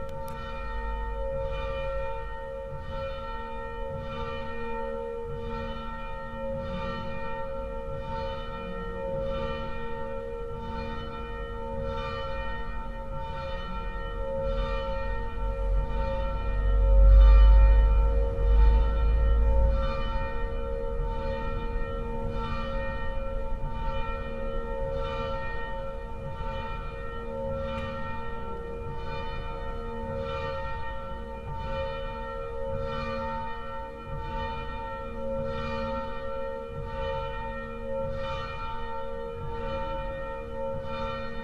Recorded with a Tascam DR-700 in a Church S. Giovanni, APM PLAY IN workshop 2016. First Day
Saluzzo CN, Italy, 27 October, 18:00